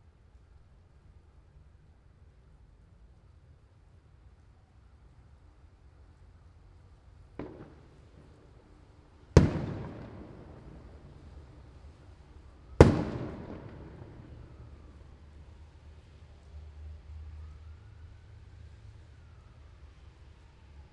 Av 2 Sur, San Baltazar Campeche, Puebla, Pue., Mexique - Puebla - Mexique
Puebla - Mexique
Ambiance matinale sur le toit de l'Alliance Française - Un joue comme un autre à Puebla